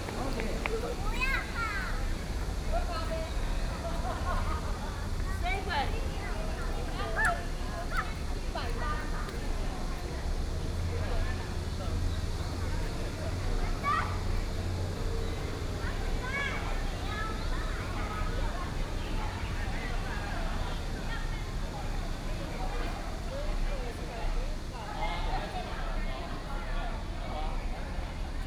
Diu Diu Dang Forest, Yilan City - Sitting on the Square
Sitting on the Square, Very hot weather, Many tourists
Sony PCM D50+ Soundman OKM II
Yilan City, Yilan County, Taiwan, 5 July 2014, 08:44